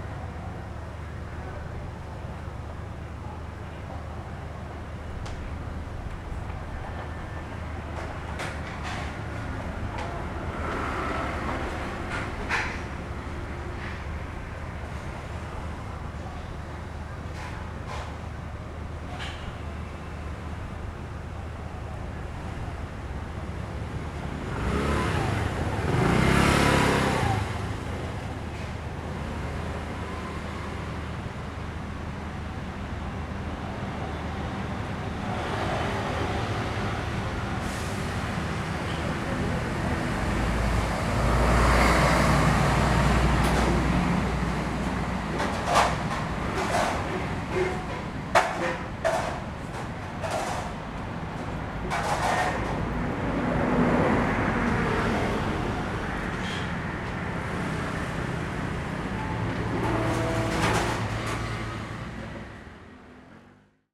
Traffic Noise, Restaurants are finishing cleaning, Sony ECM-MS907, Sony Hi-MD MZ-RH1
高雄市 (Kaohsiung City), 中華民國